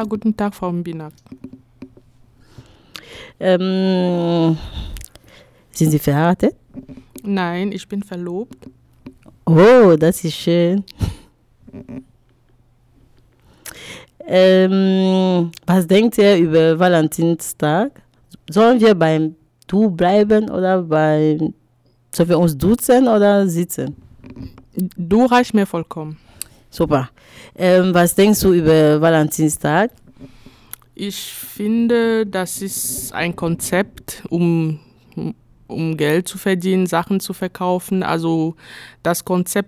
Office of AfricanTide Union, Dortmund - Frauen im Fordergrund....
...Marie talks to Raisa about the importance of International Women’s Day and asks for her experience; Raisa tells what she knows from her native Cameroon where her parents were involved in events for IWD every year...
Dortmund, Germany, 15 February 2018, 12:26